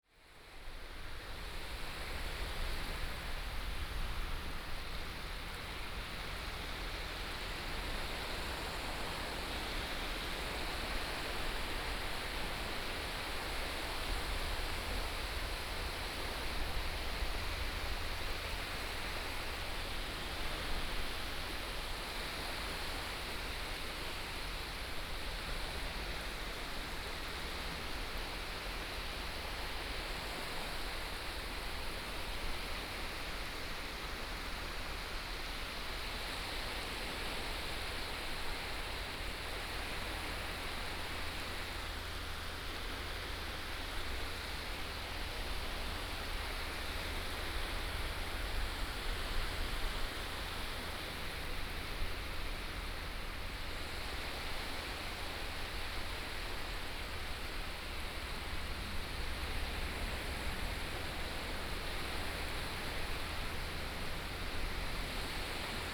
幸福沙灣, Hsinchu City - Seawater begins to boom
Seawater begins to boom, On the beach, Binaural recordings, Sony PCM D100+ Soundman OKM II